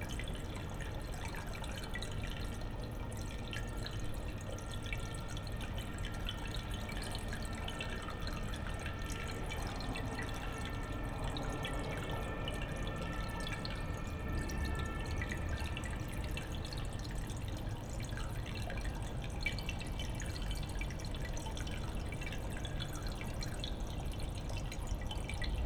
different microphone position. an emergency car appears on the scene.
(geek note: SD702 audio technica BP4025)
Berlin, Germany, 15 March 2012, ~10:00